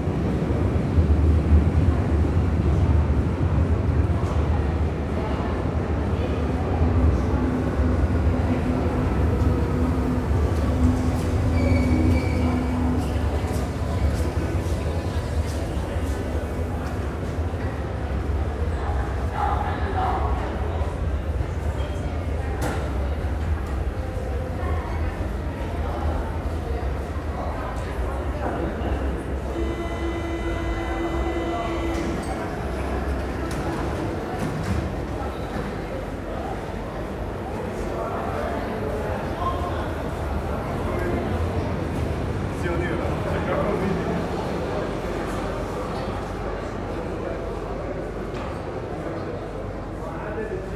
plaform underground, Alexanderpl., Berlin, Germany - U-Bahn U8 platform 10pm
Deutschland, 11 February 2017, ~22:00